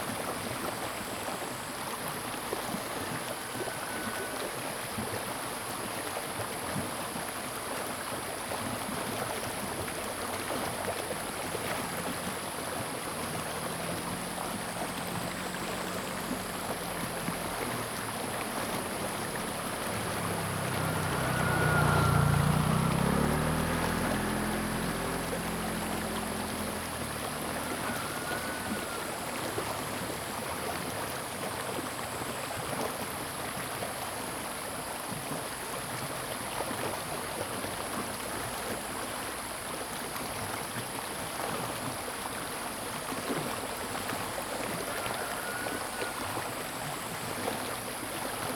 TaoMi River, 埔里鎮 Nantou County - Brook
Chicken sounds, Brook
Zoom H2n MS+ XY
12 August, 06:09